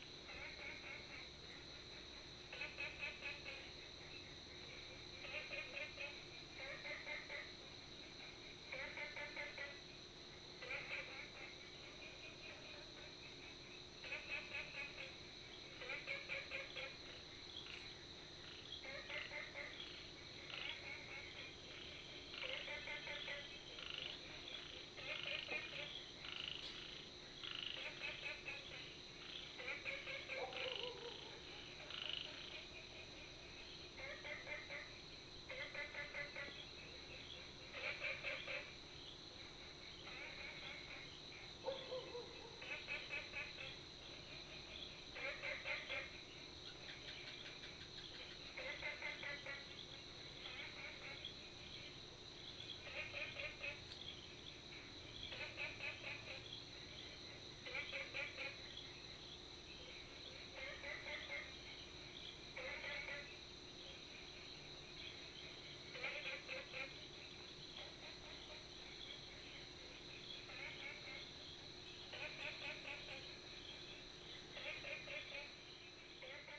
TaoMi 綠屋民宿, Nantou County - Frogs
Frogs sound
Binaural recordings
Sony PCM D100+ Soundman OKM II